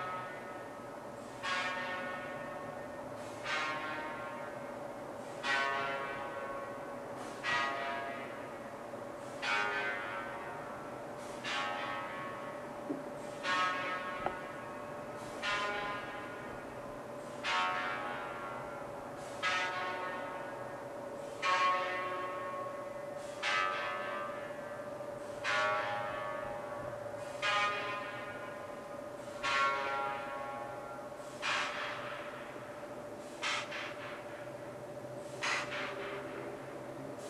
Stapelhuisstraat, Leuven, Belgien - Leuven - Maaklerplek - sound installation
Inside a high tube architecture - the sound of a sound installation by Cgristoph de Boeck entitled "Surfaces" - part of the sound art festival Hear/ Here in Leuven (B).
international sound scapes & art sounds collecion
Vlaams-Brabant, Vlaanderen, België / Belgique / Belgien, April 2022